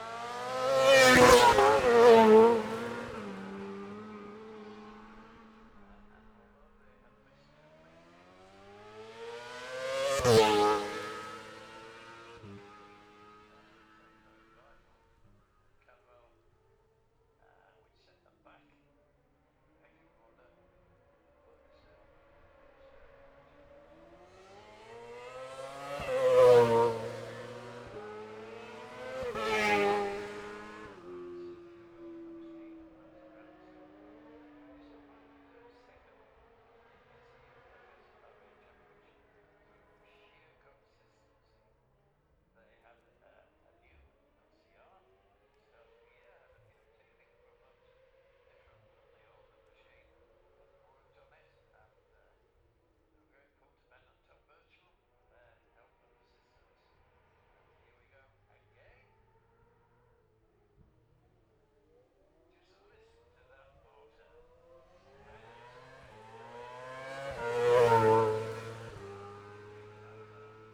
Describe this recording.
the steve henshaw gold cup 2022 ... sidecar practice ... dpa 4060s on t'bar on tripod to zoom f6 ...